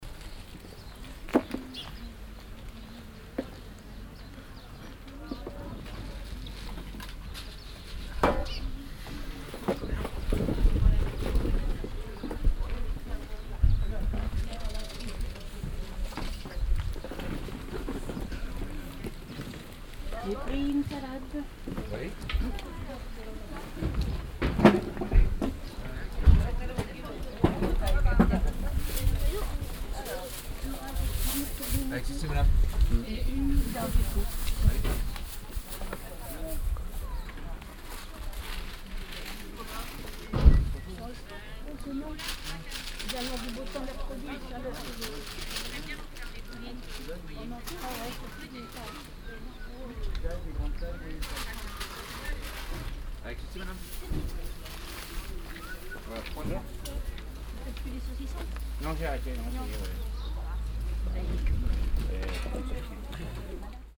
audresseles, markttag, stände und wind
markttag am morgen, diverse stände, passanten und winde
fieldrecordings international:
social ambiences, topographic fieldrecordings